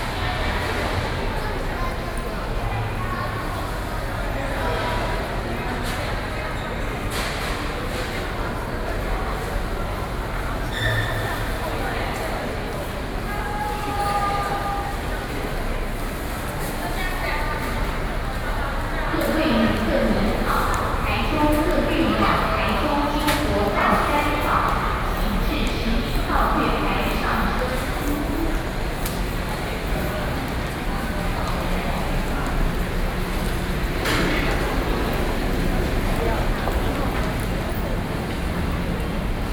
Xinyi District, Taipei City, Taiwan - SoundWalk